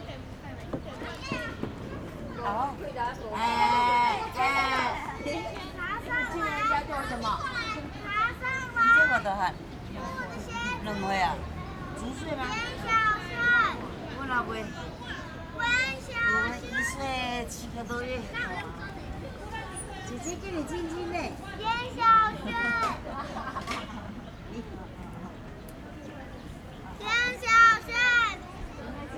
三重玫瑰公園, Sanchong Dist., New Taipei City - Children Playground
In the Park, Children Playground
Zoom H4n +Rode NT4
New Taipei City, Taiwan, 13 February, 13:17